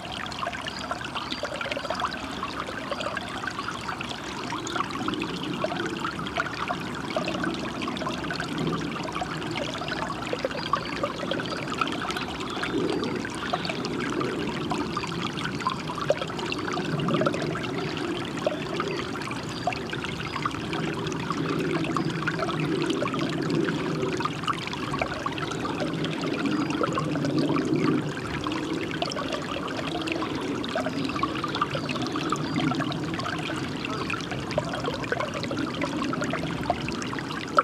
Colchester, Colchester, Essex, UK - Babbling Brook
made in friday woods on Saturday 27th of February 2015. Cold day, little bit of wind around 3.45pm